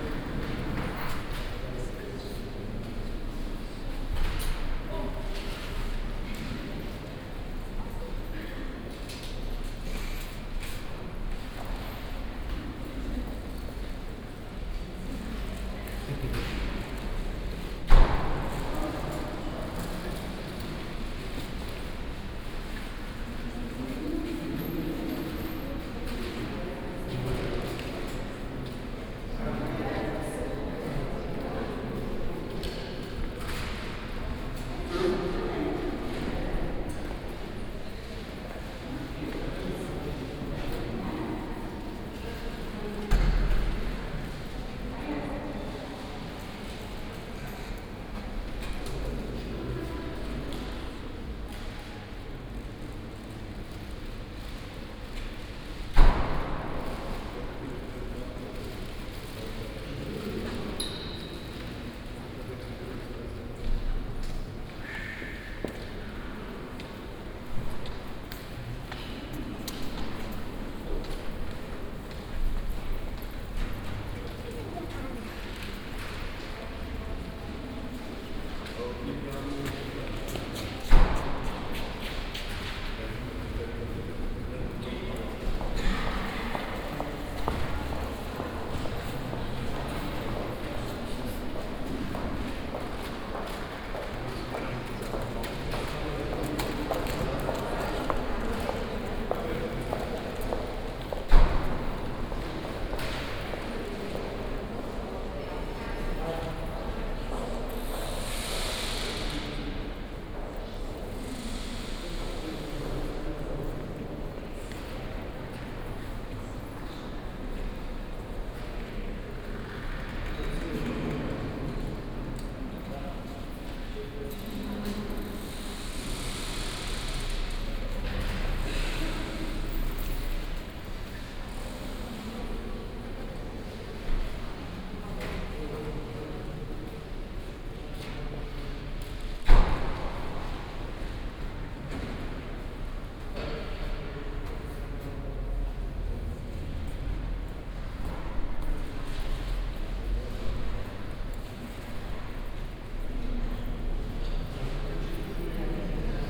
Hauptbahnhof Trier, Deutschland - hall ambience
waiting for departure at Trier main station.
(Sony D50, OKM2)
4 March 2014, ~15:00